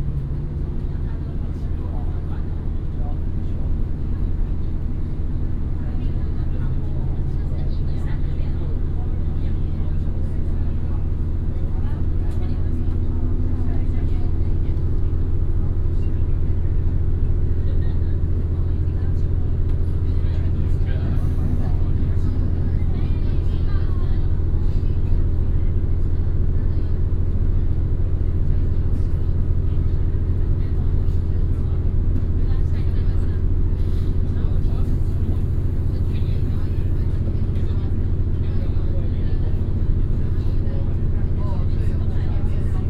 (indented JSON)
{
  "title": "內灣線, Hengshan Township, Hsinchu County - In the train compartment",
  "date": "2017-01-17 12:31:00",
  "description": "In the train compartment, tourist",
  "latitude": "24.71",
  "longitude": "121.18",
  "altitude": "254",
  "timezone": "Asia/Taipei"
}